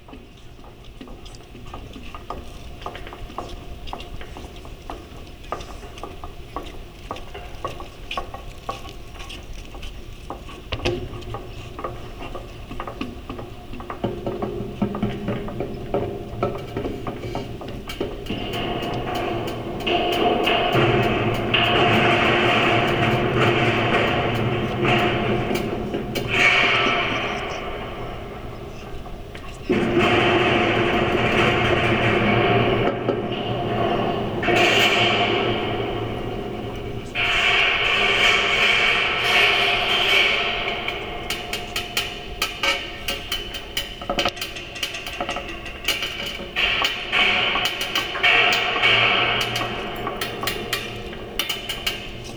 Cuenca, Cuenca, Spain - Bridge 000: Improvisación colectiva para puente peatonal y micrófonos de contacto, Cuenca.
A collective improvisation for walking bridge and contact microphones.
Produced during the workshop "Radical Listening" at the Fine Arts Faculty in Cuenca, Spain.
Technical details:
2 C-series contact microphones.
21 November 2014